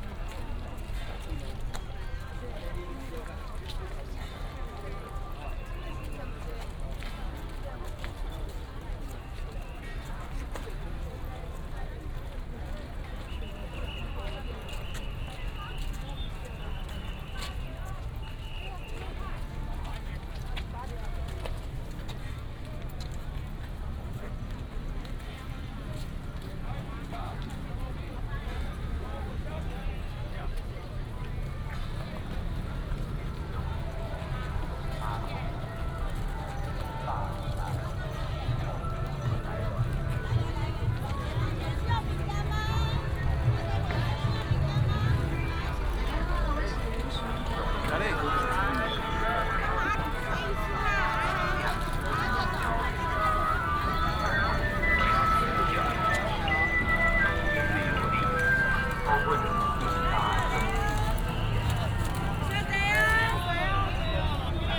Shatian Rd., Shalu Dist. - Baishatun Matsu Pilgrimage Procession
Baishatun Matsu Pilgrimage Procession, A lot of people, Directing traffic, Whistle sound, Footsteps
Shalu District, Taichung City, Taiwan, 27 February 2017